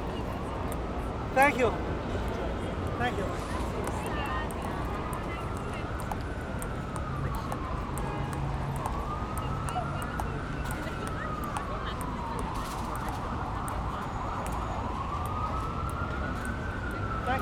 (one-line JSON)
{"title": "Bryant Park Ping Pong, New York, NY - Ping Pong Tables", "date": "2019-07-15 15:00:00", "description": "Ping Pong tables at Bryant Park.", "latitude": "40.75", "longitude": "-73.98", "altitude": "27", "timezone": "America/New_York"}